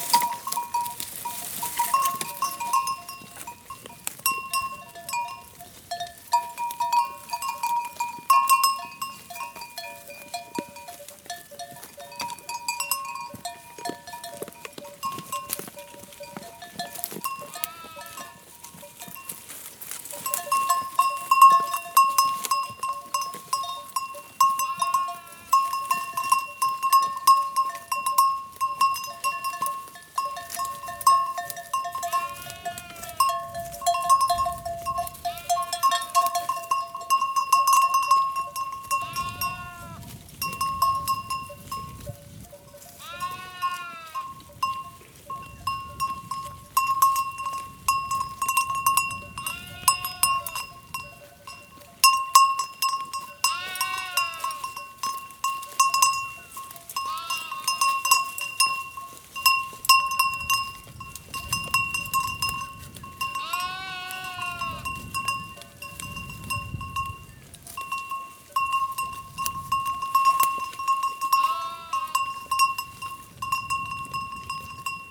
Rebanho de ovelhas, Coleja, Portugal. Mapa Sonoro do rio Douro. Sheeps herd in Coleja, Portugal. Douro River Sound Map
Portugal